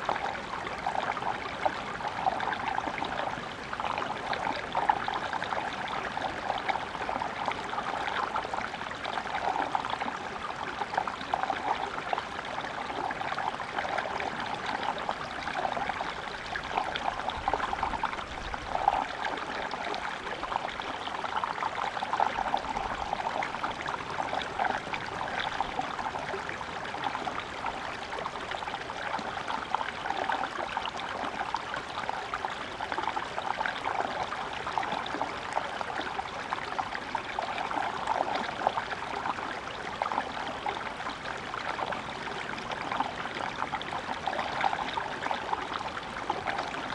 Lithuania, Uzpaliai, at Seimyniksciai mound
spring stream down the Seimyniksciai mound